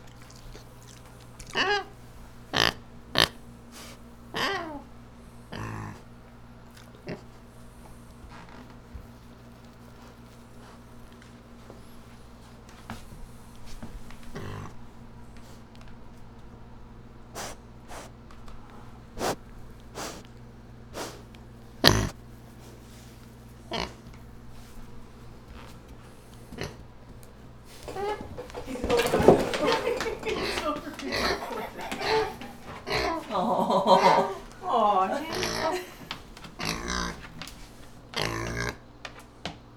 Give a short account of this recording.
My friend's wonderful pig, Hank, shuffling and scampering around her house and yard. Sony PCM D50